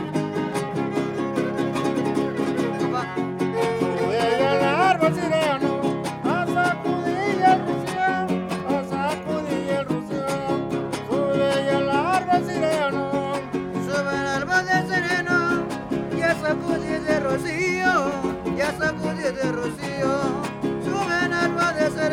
Av 5 Ote, Centro histórico de Puebla, Puebla, Pue., Mexique - Puebla - 3 musiciens
Puebla (Mexique)
3 musiciens.